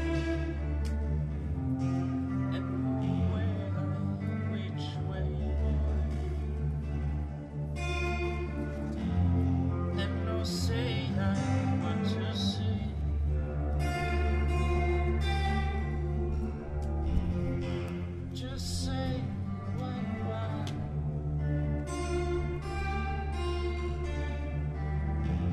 Camp Exodus - Balz is playing the tapes at the Camp Exodus
camp exodus is a performative architecture, a temporary laboratory, an informative space station in the format of a garden plot.
orientated on the modular architectures and "flying buildings", the camp exodus compasses five stations in which information can be gathered, researched, reflected on and reproduced in an individual way. the camp archive thus serves as a source for utopian ideas, alternative living concepts, visions and dreams.
Balz Isler (Tapemusician) was invited to experiment with Gordon Müllenbach (Writer).
Berlin, Germany, 15 August